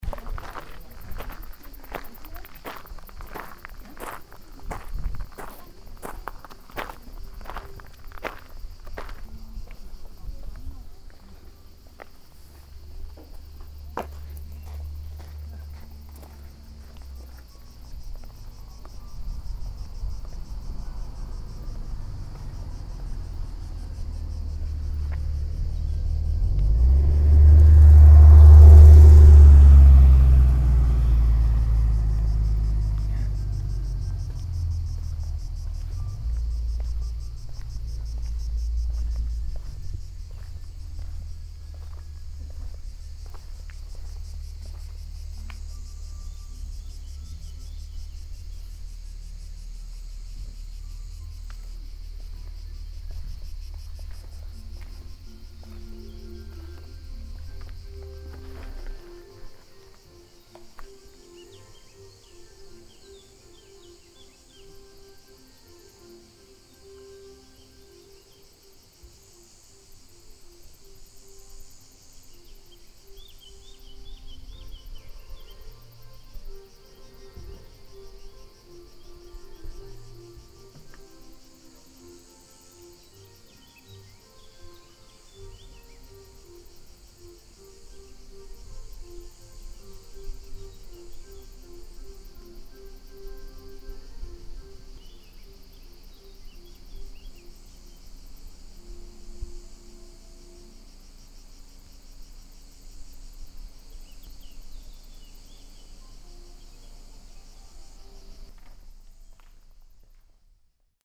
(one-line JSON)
{"title": "coletta, steps, cicades and classical music", "date": "2009-07-27 12:38:00", "description": "morning time in the small rebuilded old mountain village, steps on the stone cobble foot way, classical music from the parking area, cicades in the overall olive trees\nsoundmap international: social ambiences/ listen to the people in & outdoor topographic field recordings", "latitude": "44.11", "longitude": "8.07", "altitude": "245", "timezone": "Europe/Berlin"}